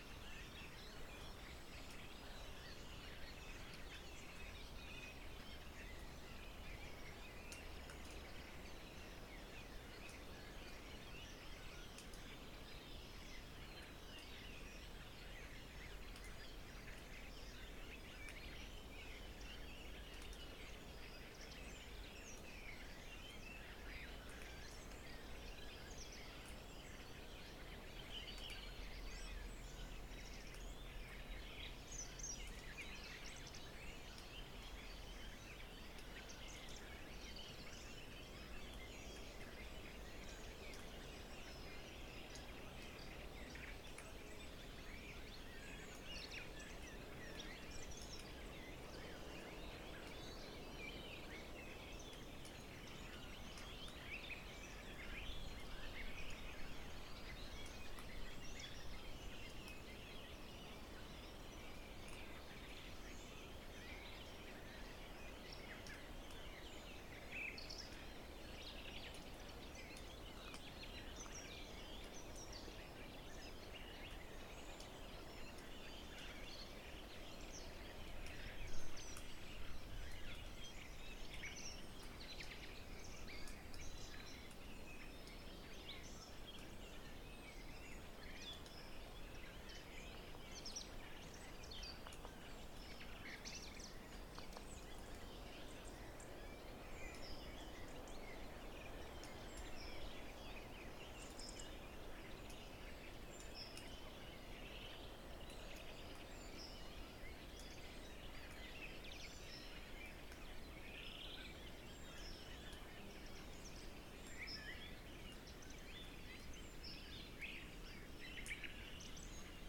Forest near the house. MIX PRE 6 II, Haun MBP with cardio capsules ORTF. Sun after the rain.
12 March, ~08:00